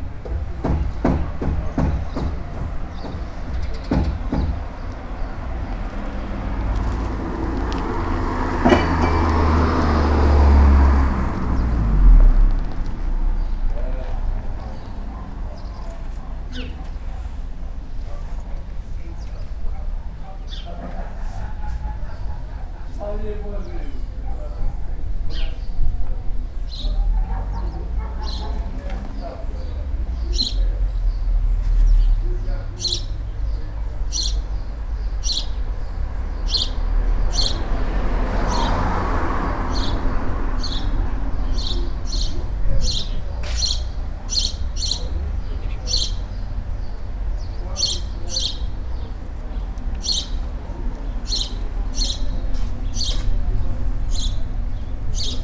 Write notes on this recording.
man working a hammer, trying to shatter wall of an old garage. he gives up after a few hits. a group of man talking behind the fence. (roland r-07)